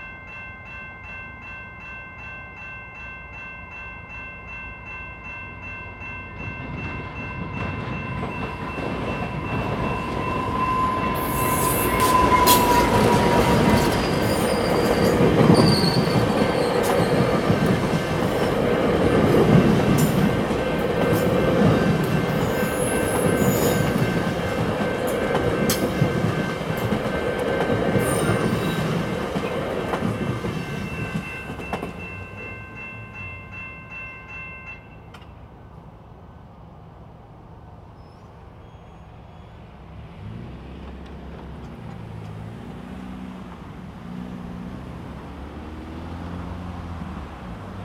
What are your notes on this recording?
Waiting for the train on a wednesday morning... Zoom H2N, 4 channels mode.